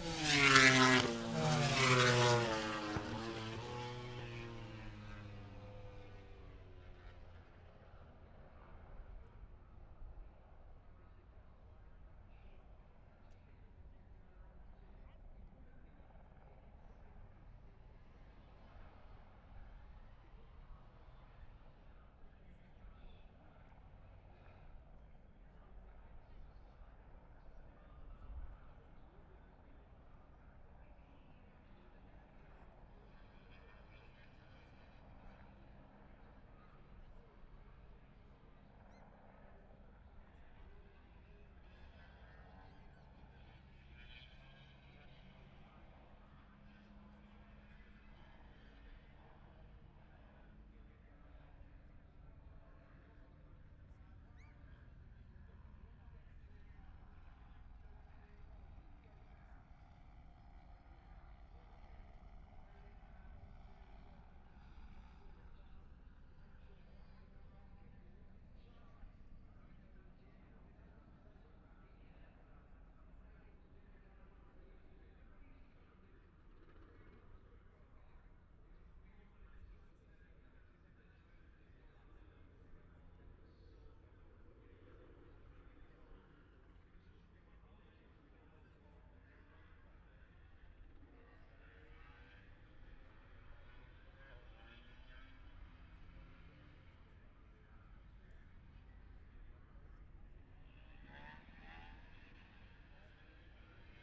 Silverstone Circuit, Towcester, UK - british motorcycle grand prix 2021 ... moto grand prix ...
moto grand prix qualifying two ... wellington straight ... dpa 4060s to Zoom H5 ...